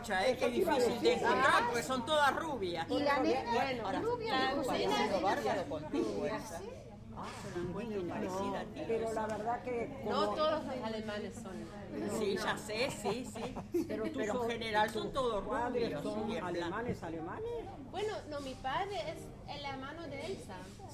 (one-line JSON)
{"title": "Club de la Fuerza Aerea, Montevideo, Uruguay - lisiane cumple 80", "date": "2011-03-28 21:00:00", "description": "It´s Lisianes 80st birthday her guests are arriving.", "latitude": "-34.88", "longitude": "-56.04", "altitude": "9", "timezone": "America/Montevideo"}